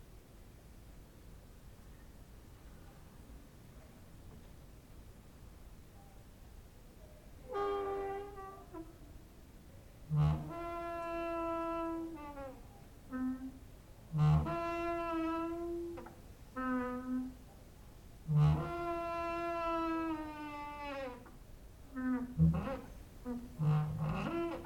Mladinska, Maribor, Slovenia - late night creaky lullaby for cricket/17
no cricket at that day ... strong wind outside, exercising creaking with wooden doors inside
26 August 2012, 23:02